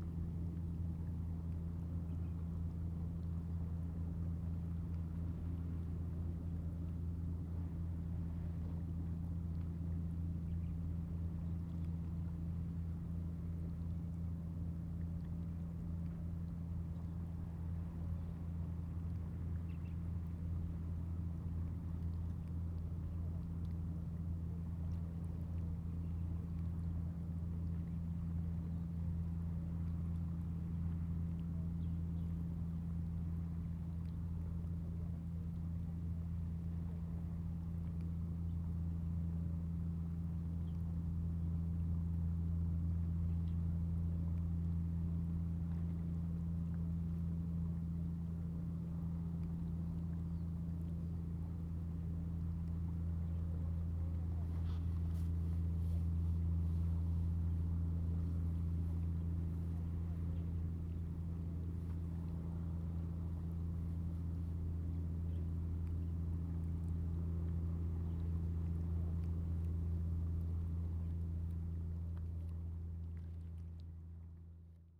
奎璧山地質公園, Penghu County - The distant sound of fishing vessels
The distant sound of fishing vessels
Zoom H2n MS+XY
Penghu County, Huxi Township, 21 October